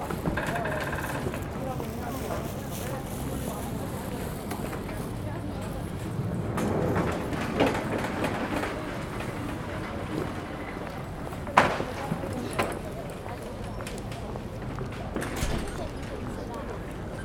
Zentrum, Leipzig, Deutschland - Marktplatz | marketplace
am Marktplatz räumen Händler ihre Stände zusammen und verladen sie auf Transporter und LKWs | on the marketplace traders remove their stalls and load them on trucks and vans
Sachsen, Deutschland, European Union